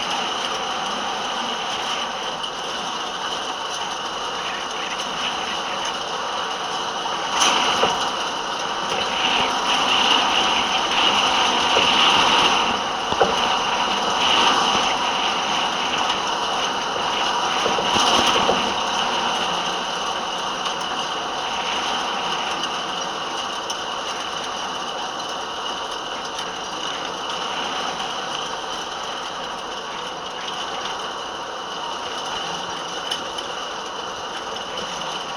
Galvanised stock wire fencing with the wind blowing a gale ... two contact mics pushed into the wire elements ... listening to the ensuing clatter on headphones was wonderful ...